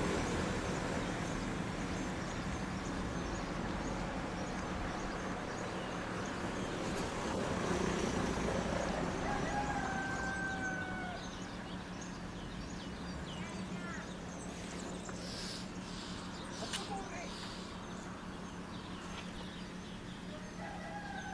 montelparo, paesaggi umani, 2 maggio 2008, 11.19
Montelparo Province of Fermo, Italy